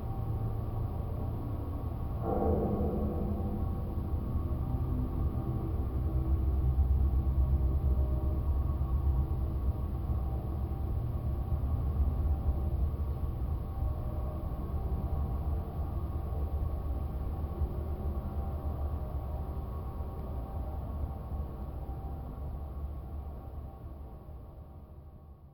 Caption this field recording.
some warehouse. long chimney and long iron support wires. listening how it drones...